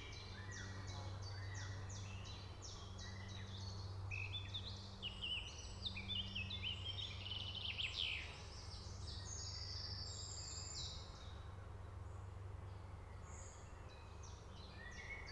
Leafield Rd, Chipping Norton, UK - Beautiful early summer morning birdsong
Recorded in a lovely mature wood at the bottom of a valley forming a natural 'amphitheatre' with a small road running left to right behind the mic array. Wrens, Garden Warblers, Blackcaps, Chiffchaffs, flies, aeroplanes, cars, Raven, Chaffinch, Song Thrush, Blackbird, sheep, more flies, Carrion Crows all with a slight echo due to the geography.Sony M10 with custom made set-up of Primo capsules.
England, UK, 22 June 2019